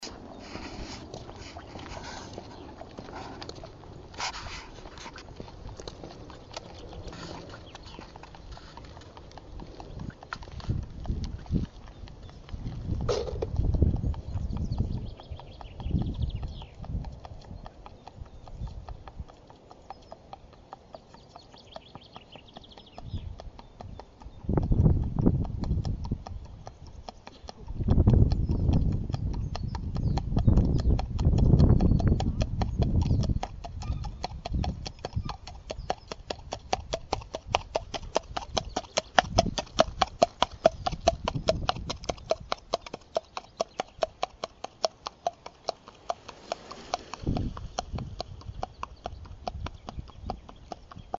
Dyrehaven horseshoe waltzer
The Dyrehaven hosts a lot of very old trees, a flock of freely straying deer and some waltzer dancing horses.
Region Hovedstaden, Danmark